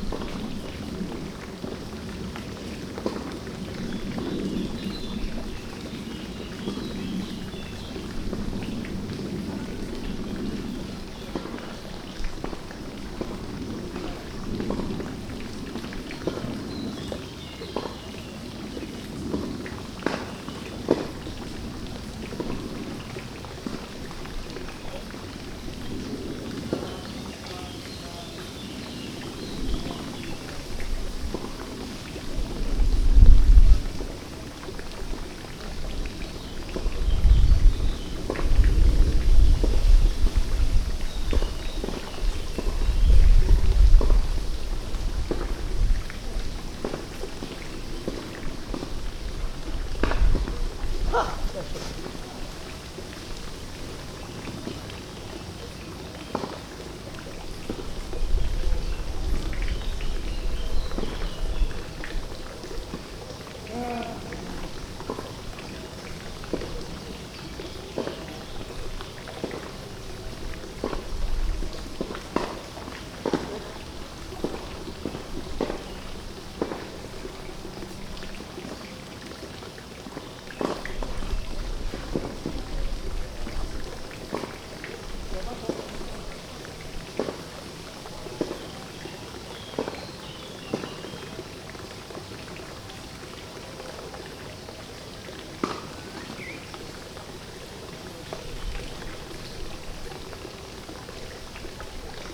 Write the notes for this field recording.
a fountain, of reciprocity, back and forth, appreciating, every offer of yours, never, complaining, about, one of your moves, or moods, a place for swimming, out in the open, sky